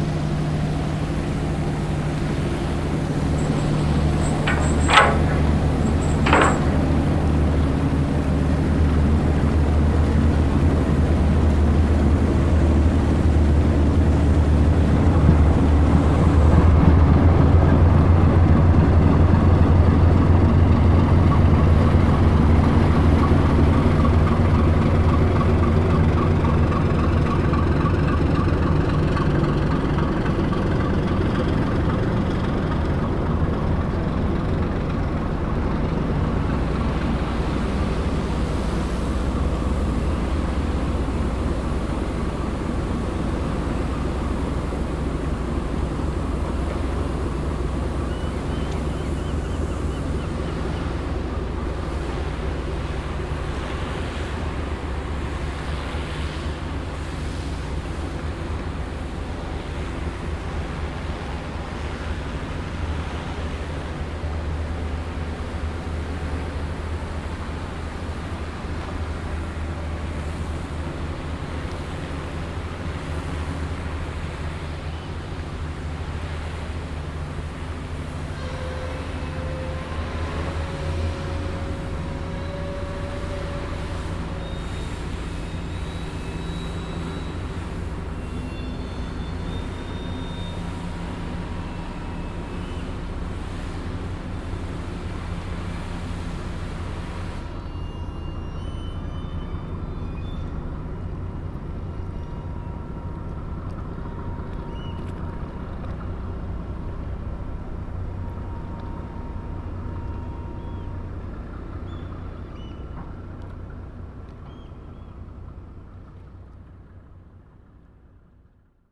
Fisherboats leaving the lock at Ostende harbour, vhf radio comms, engine noises etc. The hissing noise in the background is from a neighbouring dry dock where they were sandblasting another boat. Could well have done without that but you only get so many chances... Recorded with a bare Zoom H4n lying on a bollard.
Ostend, Belgium